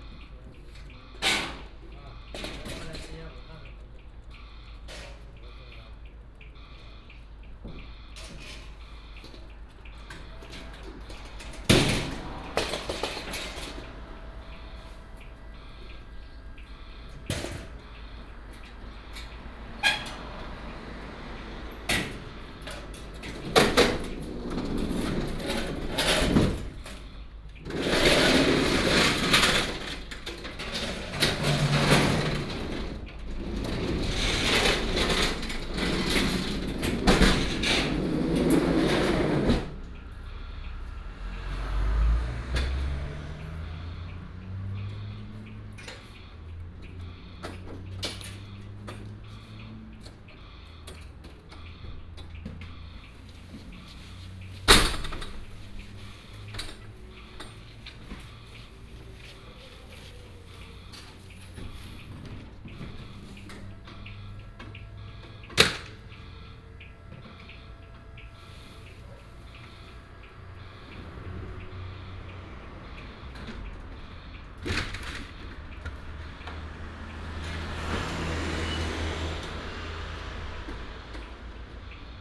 Night outside the convenience store, Late night street, Traffic sound, Truck unloading, Game Machine Noise, Dog
Binaural recordings, Sony PCM D100+ Soundman OKM II
Pingtung County, Taiwan